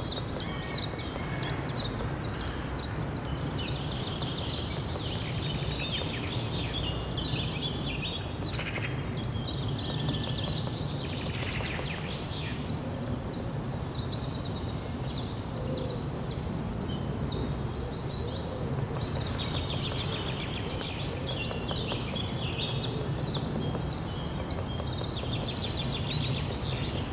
Tech Note : Ambeo Smart Headset binaural → iPhone, listen with headphones.